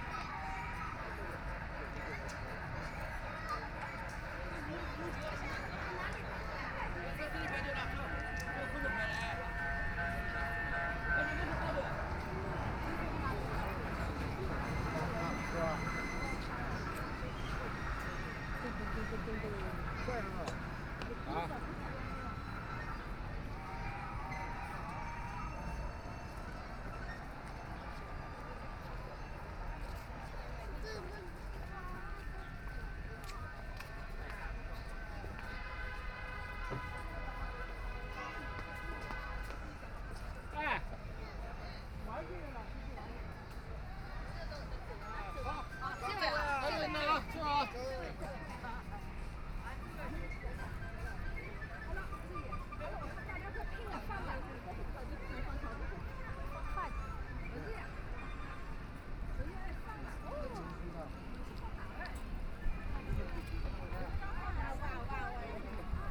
Walking to and from the crowd, Many sound play area facilities, Train rides, Binaural recording, Zoom H6+ Soundman OKM II